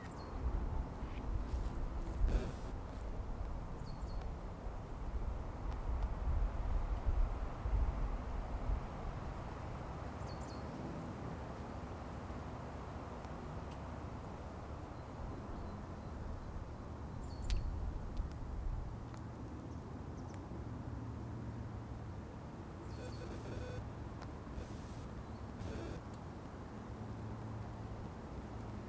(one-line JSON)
{"title": "Ringwood Ford Bottom, Minstead, UK - 042 Birds, diginoise", "date": "2017-02-11 15:40:00", "latitude": "50.89", "longitude": "-1.63", "altitude": "86", "timezone": "GMT+1"}